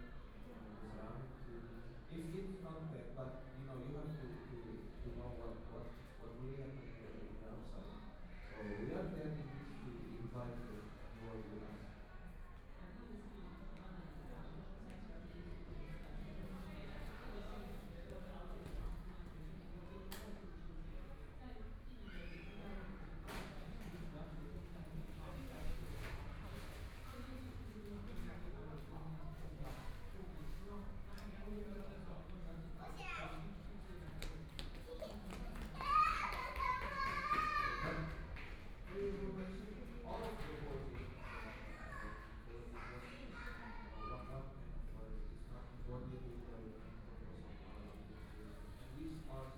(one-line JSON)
{"title": "–CHUNG SHAN CREATIVE HUB, Taipei - In the lobby", "date": "2014-02-08 15:16:00", "description": "Sound of the Art Forum's activities, Sound indoor restaurant, Binaural recordings, Zoom H4n+ Soundman OKM II", "latitude": "25.06", "longitude": "121.52", "timezone": "Asia/Taipei"}